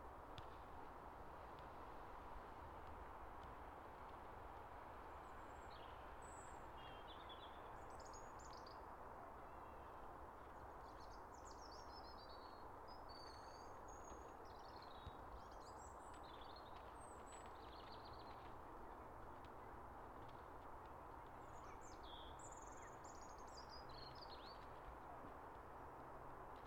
Daisy Dip, Swaythling, Southampton, UK - 002 Birdsong, child, mum playing football
In Daisy Dip, with a Tascam DR-40
2 January 2017